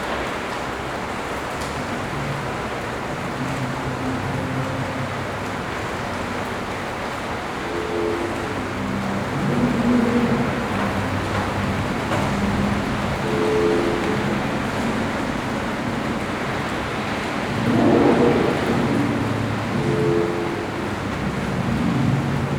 rain from the 2nd floor of maribor's 2. gimnazija highschool building, with the mics near a row of slightly open floor-to-ceiling windows. in the background can be heard sounds coming from ignaz schick, martin tétrault, and joke lanz's turntable workshop, taking place on the other side of the building.